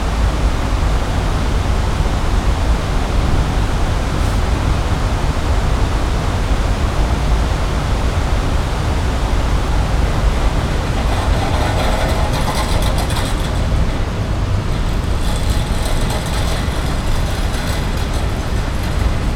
Brussels, Conrad Hotel, Air Conditionning
Air conditionning on the ground, inner yard of the hotel.